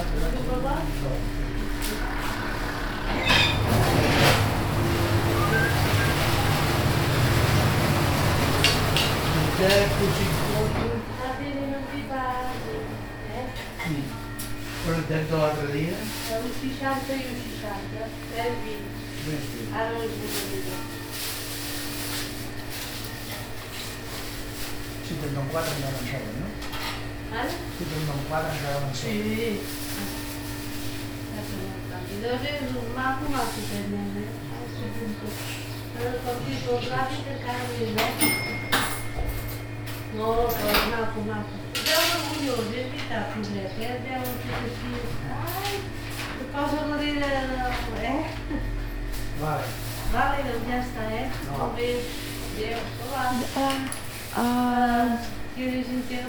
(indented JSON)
{
  "title": "SBG, Forn de Pa Griera - comprando pan",
  "date": "2011-07-22 11:00:00",
  "description": "Una escena habitual en el horno de pan del pueblo, con ese sonido característico de la máquina para cortar las rebanadas.",
  "latitude": "41.98",
  "longitude": "2.17",
  "altitude": "878",
  "timezone": "Europe/Madrid"
}